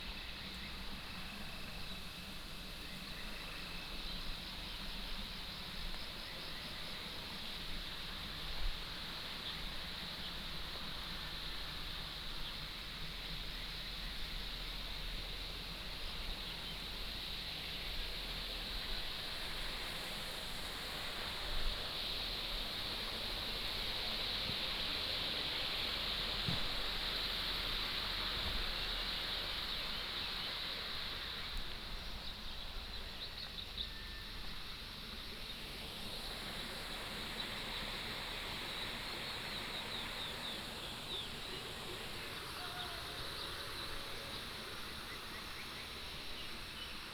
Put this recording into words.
Ecological Park, Walking along the stream